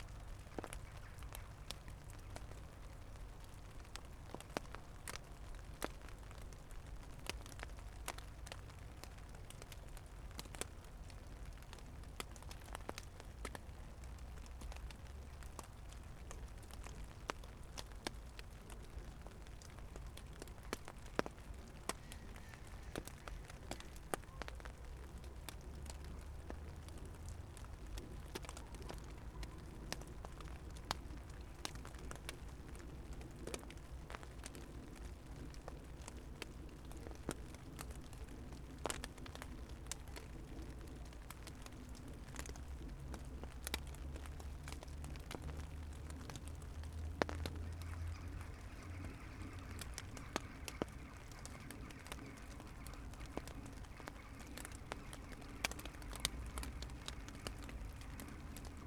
Lithuania, Utena, rain on garbage bags
close-up recording of raindrops on plastic garbage bags